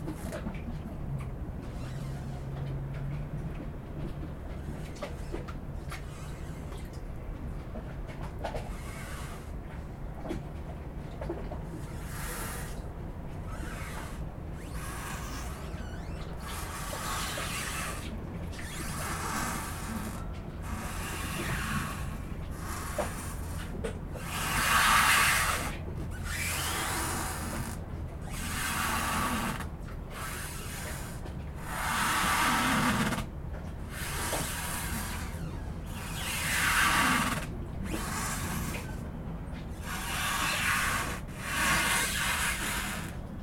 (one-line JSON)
{"title": "Estonia - Ship scratching against dock", "date": "2013-11-06 15:00:00", "description": "Ship scratching against the pier at Seaplane harbor on a quiet November day.", "latitude": "59.46", "longitude": "24.74", "altitude": "1", "timezone": "Europe/Tallinn"}